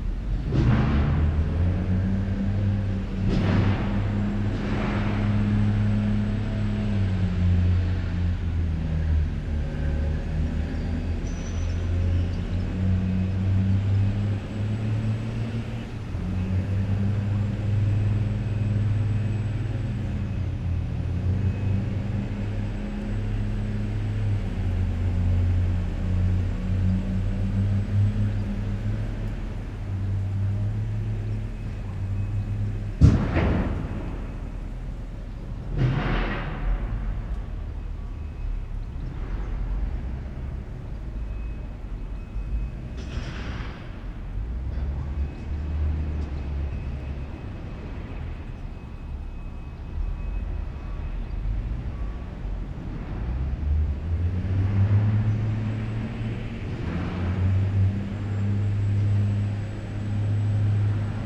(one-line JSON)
{"title": "Lipica, Sežana, Slovenia - Sežana quarry", "date": "2020-07-08 07:37:00", "description": "Quarry in Sežana. Microphones Lom Usi Pro.", "latitude": "45.68", "longitude": "13.89", "altitude": "404", "timezone": "Europe/Ljubljana"}